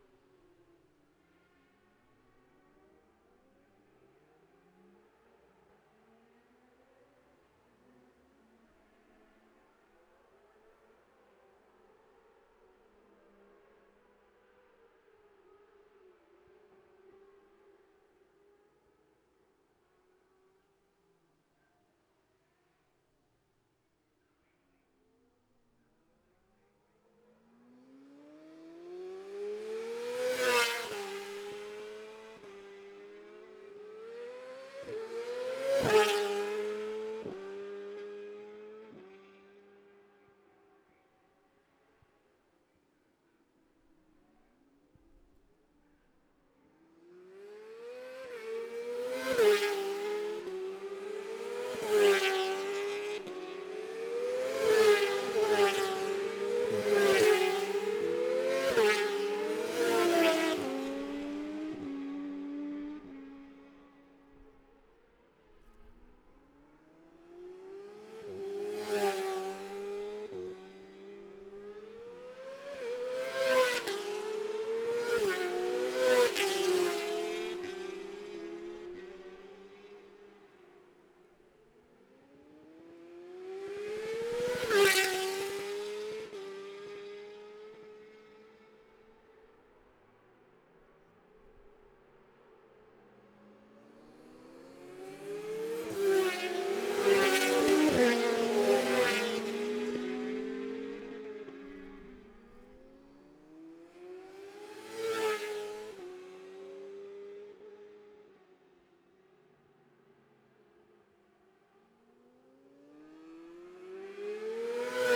Jacksons Ln, Scarborough, UK - Gold Cup 2020 ...
Gold Cup 2020 ... 600 odds practice ... dpa bag MixPre3 ...